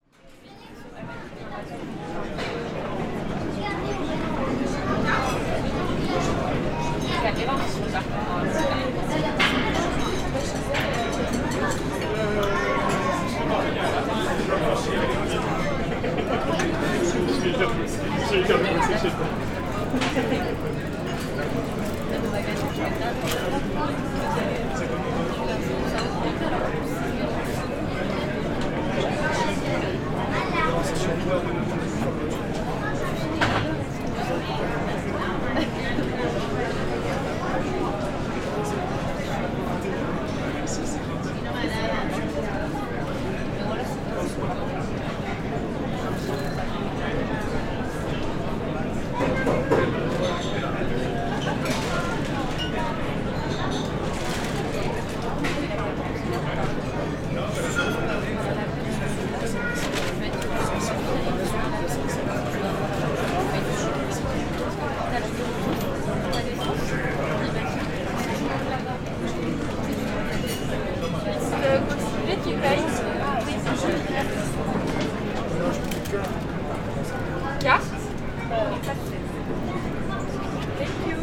Pl. Camille Jullian ambiance, atmosphere
Captation ZOOMH6
9 August 2022, ~16:00